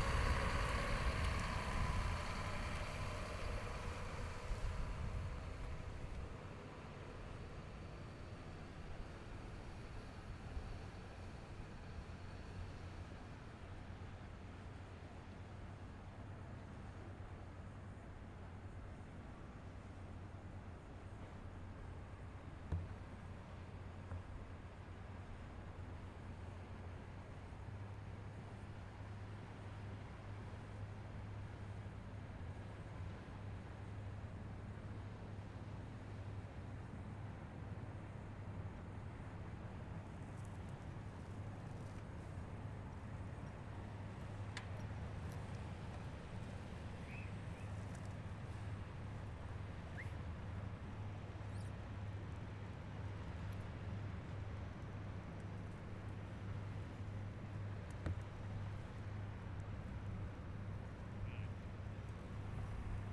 Wasted Sound from the head of the KNSM.
Noord-Holland, Nederland, 4 December, ~13:00